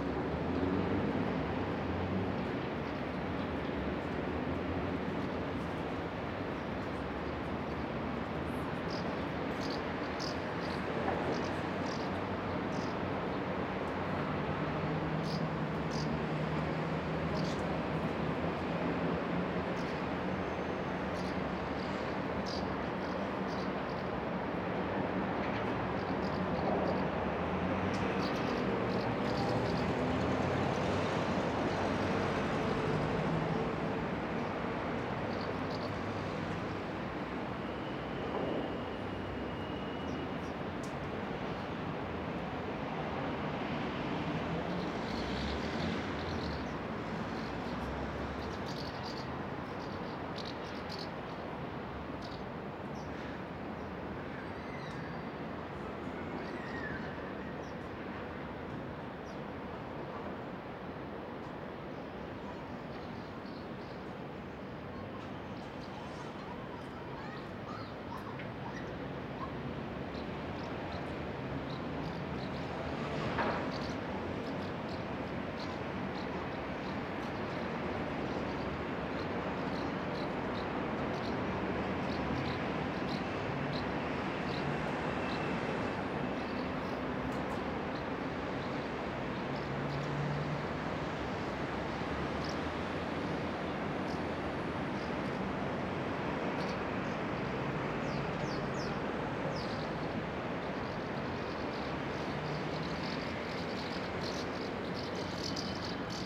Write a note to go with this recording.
Auf dem Balkon. 23. Stockwerk.